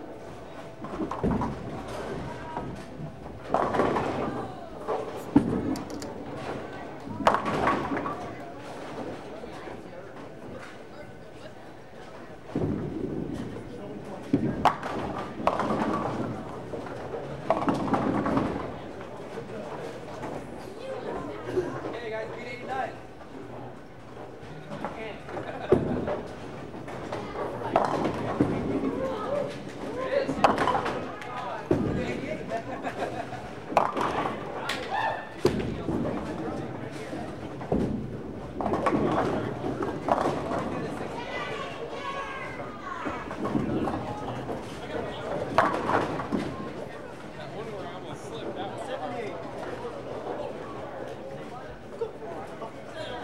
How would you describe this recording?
Bowling truly is The Sport of Kings. Where else can you get such instant feedback and wild enthusiasm for every good shot? What other sport encourages participants to drink beer? Major elements: * Strikes, spares and the occasional gutterball, * Pin-setting machines, * Appreciative bowlers, * A cellphone